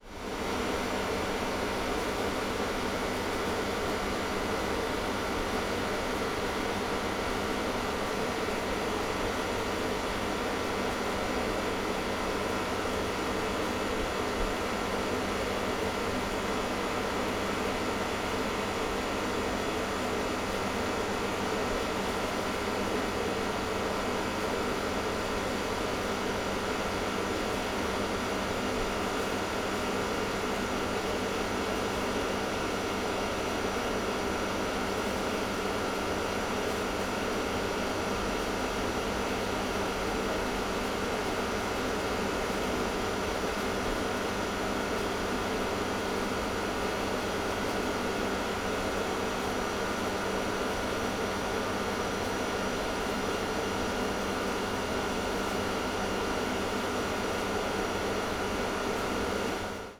another AC unit whirring in the night. recorded from about 20 meters away from the unit.